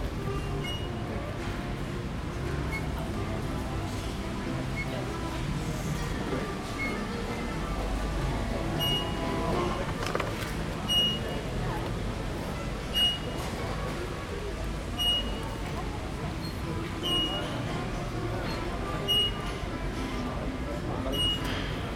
Béziers, France - restaurant la comédie - Beziers
Ambiance outside of the bar "La comédie", Beziers. Recorded by a zoom H4n.
Field recording.